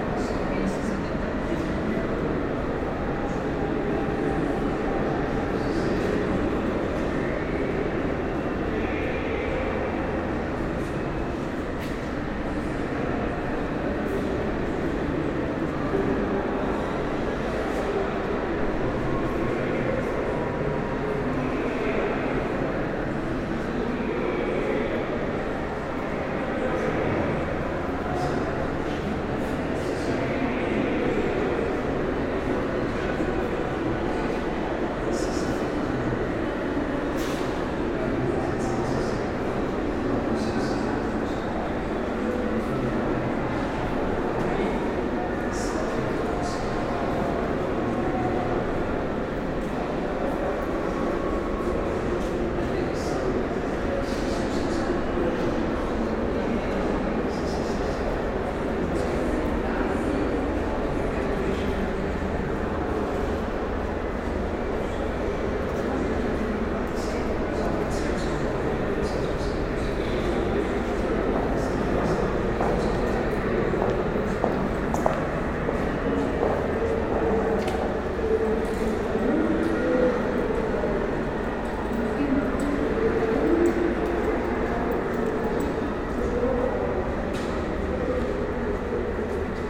Sounds of the Istanbul Biennial
Ambient sounds of the Antrepo No.3 exhibition hall during the 11th Istanbul Biennial
Beyoğlu/Istanbul Province, Turkey